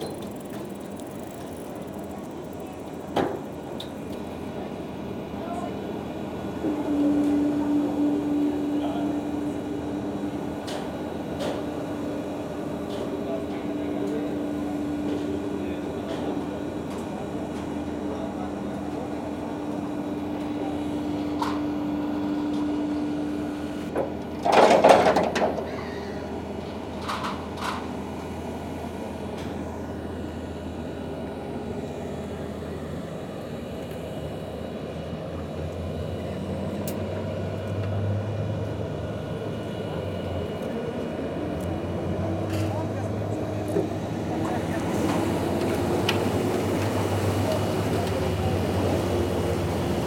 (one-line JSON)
{"title": "Antwerpen, Belgique - Linkeroever ferry", "date": "2018-08-04 14:45:00", "description": "The Linkeroever ferry, crossing the Schelde river. The boat is arriving, people go out and in essentially with bicycles, and the boat is leaving.", "latitude": "51.22", "longitude": "4.40", "altitude": "2", "timezone": "GMT+1"}